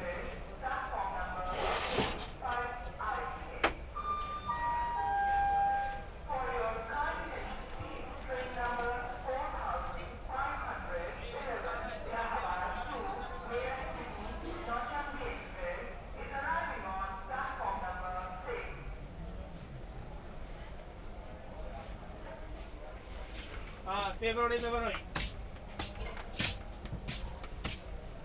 Varanasi Junction, maa surge balika intermediate collage, Railwayganj Colony, Varanasi, Uttar Pradesh, India - train leaving Varanassi
Varanassi Junction train station, waiting in train to leave